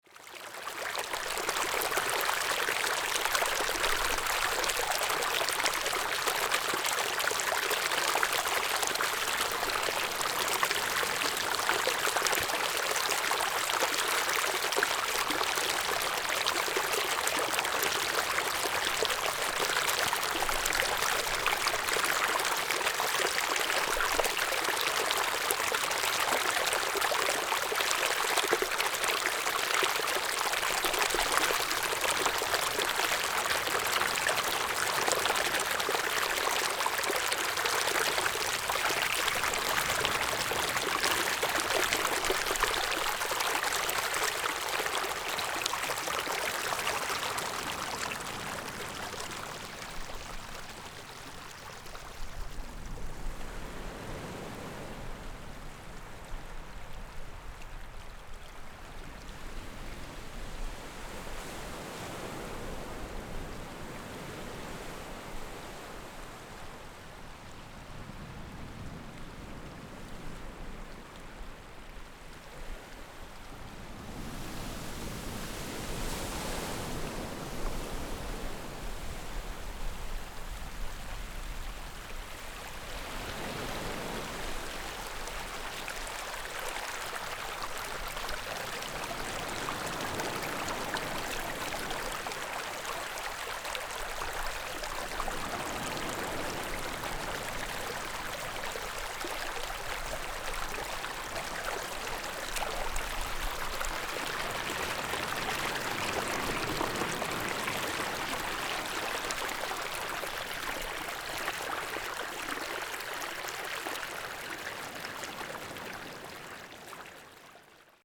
建農里, Taitung City - waves and Brook
At the seaside, Sound of the waves, Brook, Very hot weather
Zoom H6 XY